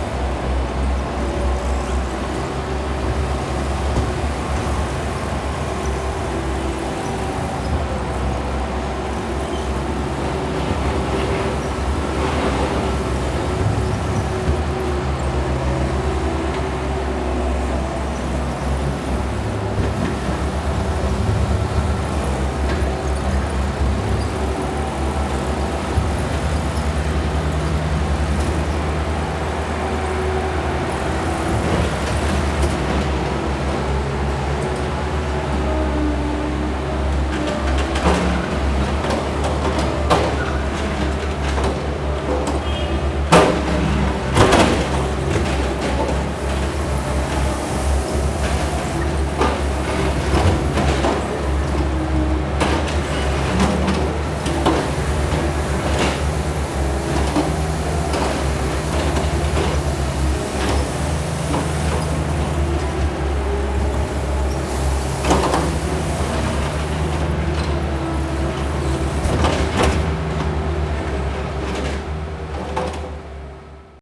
Yongheng Rd., 永和區, New Taipei City - Road Construction
Road Construction, Workers quarrel between each other
Zoom H4n